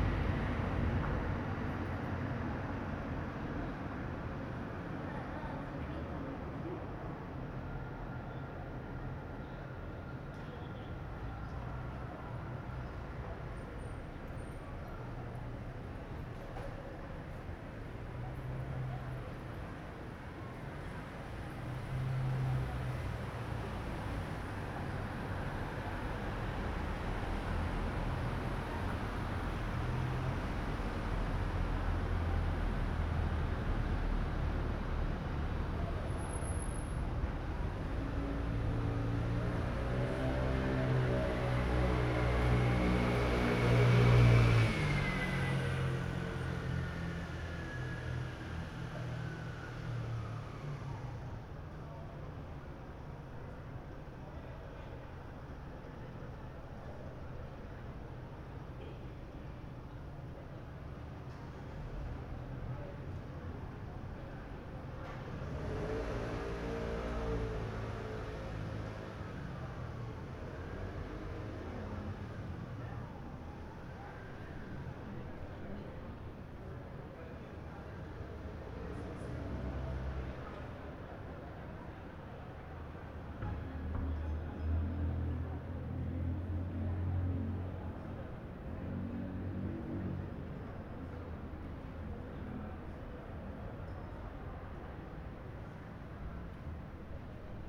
Rue des Serruriers, Strasbourg, Frankreich - Hotel Gutenberg, outside the window, 2nd floor
Street sounds recorded from the window sill on the second floor.